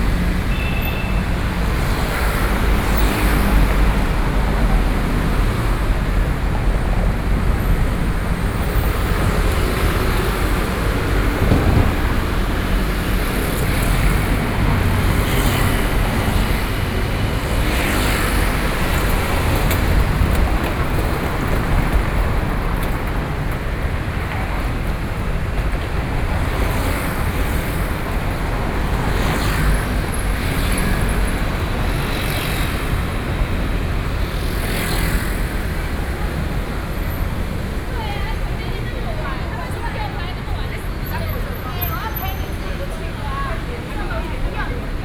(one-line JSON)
{"title": "Fùxīng North Rd, Taipei, Taiwan - Traffic noise", "date": "2012-11-09 13:26:00", "latitude": "25.05", "longitude": "121.54", "altitude": "24", "timezone": "Asia/Taipei"}